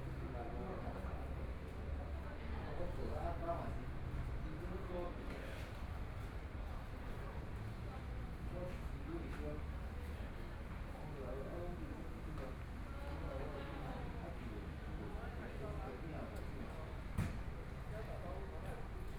Outside the library, Binaural recordings, Zoom H4n+ Soundman OKM II ( SoundMap2014016 -18)